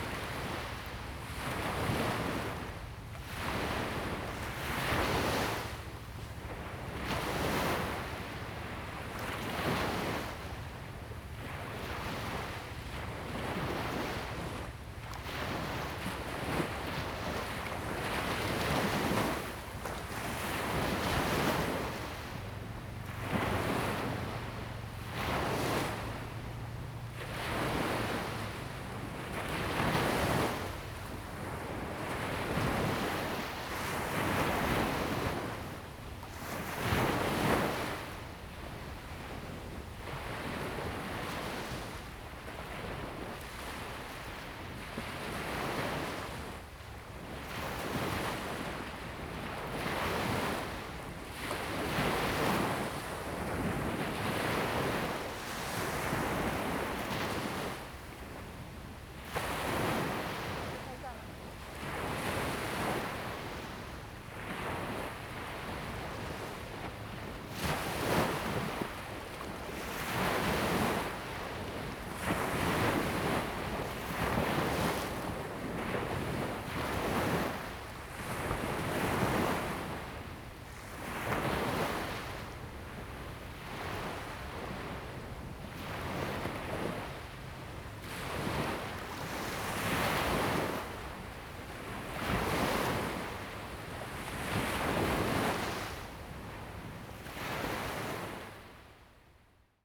{"title": "Lieyu Township, Taiwan - Sound of the waves", "date": "2014-11-04 09:43:00", "description": "Sound of the waves\nZoom H2n MS +XY", "latitude": "24.45", "longitude": "118.24", "altitude": "8", "timezone": "Asia/Shanghai"}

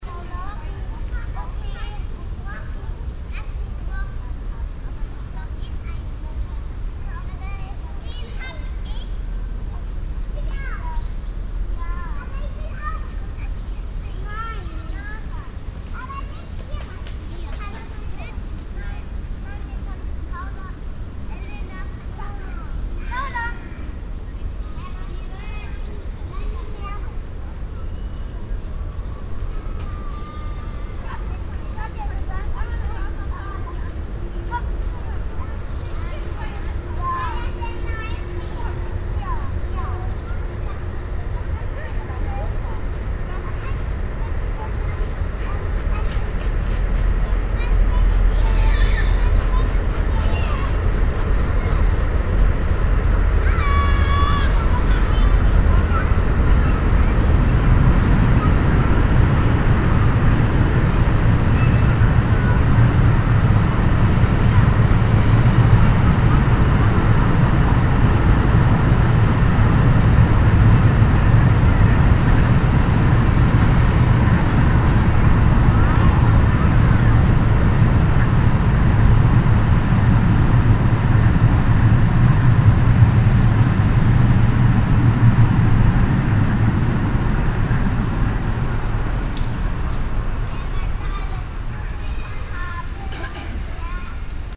{"title": "cologne, stadtgarten, kinder am baum - cologne, stadtgarten, kinder am baum", "date": "2008-05-06 21:56:00", "description": "stereofeldaufnahmen im september 07 - morgens\nproject: klang raum garten/ sound in public spaces - in & outdoor nearfield recordings", "latitude": "50.94", "longitude": "6.94", "altitude": "53", "timezone": "Europe/Berlin"}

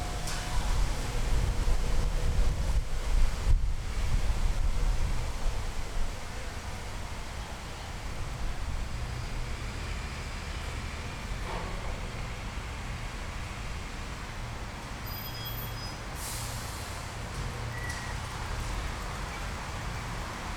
{"title": "berlin wall of sound-prinzen/heinrich-heine str. j.dickens 140909", "latitude": "52.51", "longitude": "13.41", "altitude": "35", "timezone": "Europe/Berlin"}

